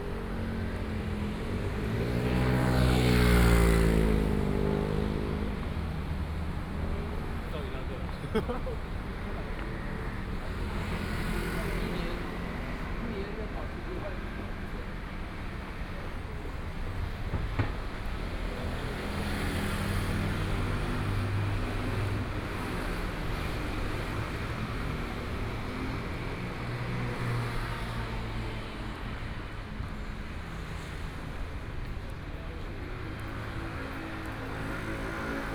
Linsen S. Rd., Taipei City - walking on the Road
walking on the Road
Binaural recordings